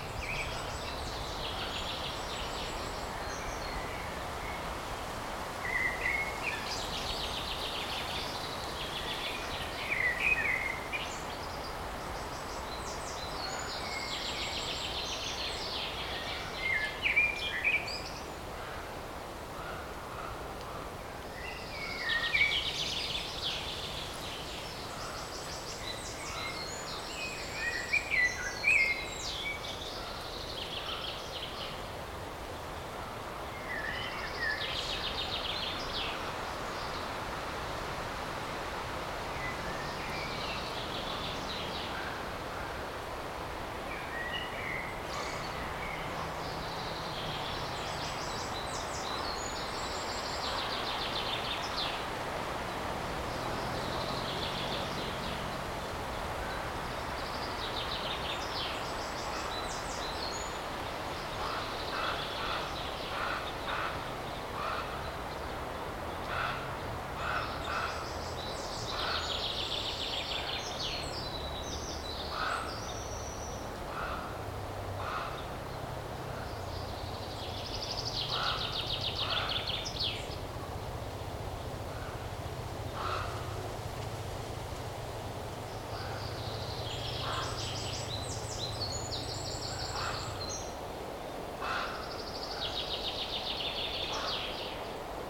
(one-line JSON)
{"title": "Сергиев Посад, Московская обл., Россия - Soundscape from the bottom of the dry stream", "date": "2021-05-30 13:46:00", "description": "Soundscape from the bottom of the dry stream. Wind is blowing, birds are singing and screaming, rare fly is buzzing... Some distant sounds like cars and train can be heard.\nRecorded with Zoom H2n, surround 2ch mode", "latitude": "56.30", "longitude": "38.20", "altitude": "209", "timezone": "Europe/Moscow"}